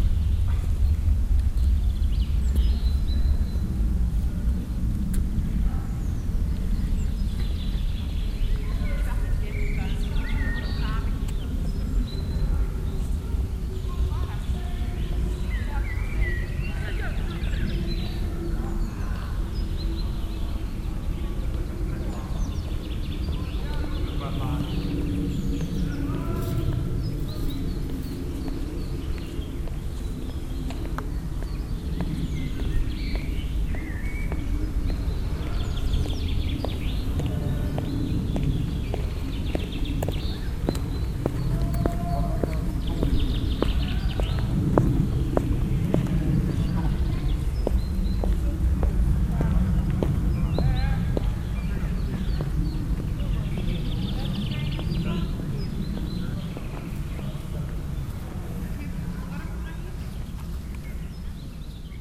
otterlo, houtkampweg, kröller-müller museum, in the park
international soundmap : social ambiences/ listen to the people in & outdoor topographic field recordings